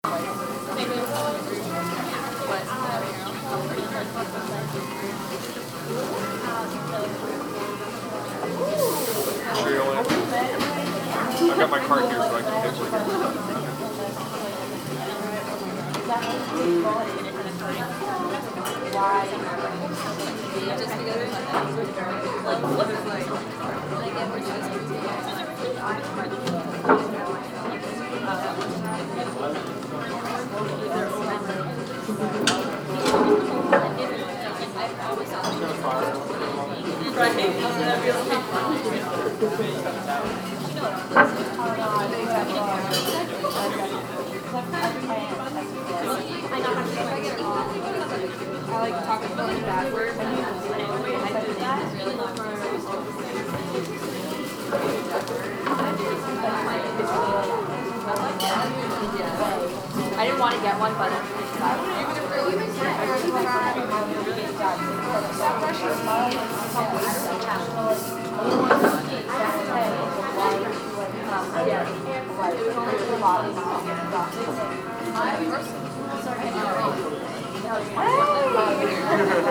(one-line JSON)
{"title": "New Paltz, NY, USA - Starbucks", "date": "2016-10-27 13:50:00", "description": "Starbucks is attached to the Parker Theater and is a social gather spot for students to study and socialize. The recording was taken using a Snowball condenser microphone and edited using Garage Band on a MacBook Pro. It was taken during a busy time of day and while it was raining outside.", "latitude": "41.74", "longitude": "-74.08", "altitude": "108", "timezone": "America/New_York"}